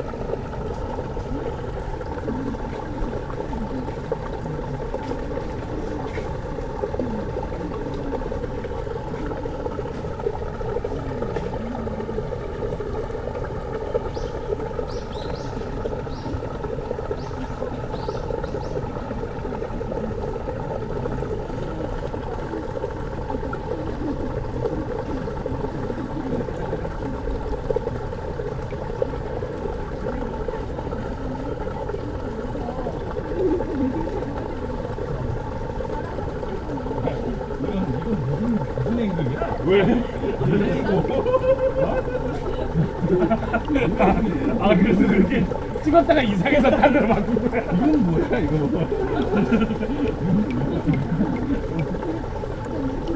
canal, Ginkakuji gardens, Kyoto - water flux
gardens sonority, water flow, drop here and there, people